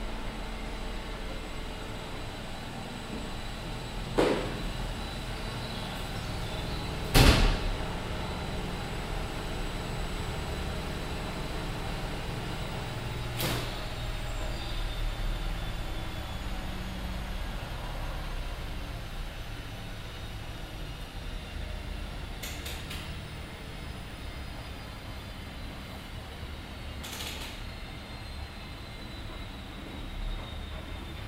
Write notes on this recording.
soundmap: cologne/ nrw, sb wäscherei am ubierring, köln sued, mittags, project: social ambiences/ listen to the people - in & outdoor nearfield recordings